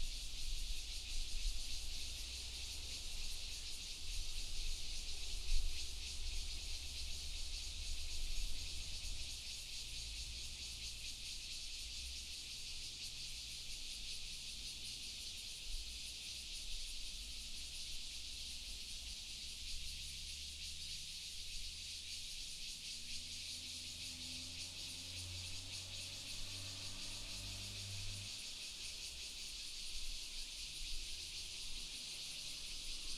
東眼產業道路, Sanxia Dist., New Taipei City - Cicada
Cicada, traffic sound, birds sound